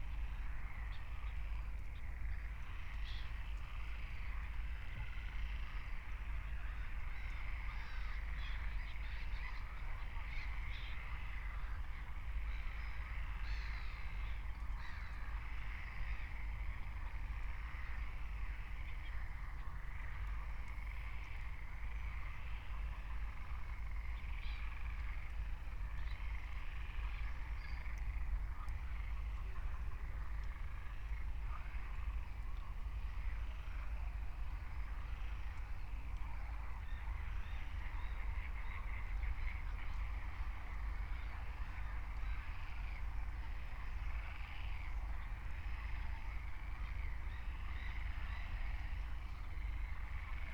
23:50 Berlin, Buch, Moorlinse - pond, wetland ambience
Deutschland, 26 June, 23:50